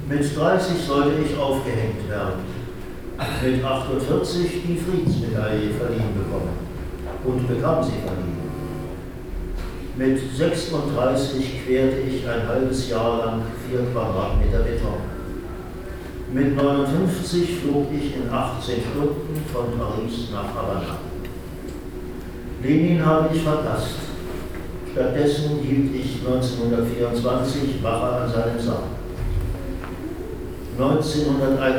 Alevitisches Kulturzentrum, Hamm, Germany - Echos of Nazim's voice...
An evening of poetry and music at the Alevi Cultural Centre… as we slip in, a song accompanied on the Sas, then a poem in Turkish and German: Nazim Hikmet’s “Curriculum Vitae”… it’s almost the end of the event; the mics are “playing up”; adding an eerie effect to “Nazim’s voice” resounding from the lyrics…
Ein Lyrikabend im Alevitischen Kulturzentrum… ein Lied begleitet auf der Sas; dann ein Gedicht auf Türkisch und Deutsch: Nazim Hikmet’s “Lebenslauf”… die Veranstaltung geht schon beinahe dem Ende entgegen, und die Microphone “verabschieden sich”… “Nazim’s Stimme” hallt aus seinen Versen unheimlich wieder…
12 September 2014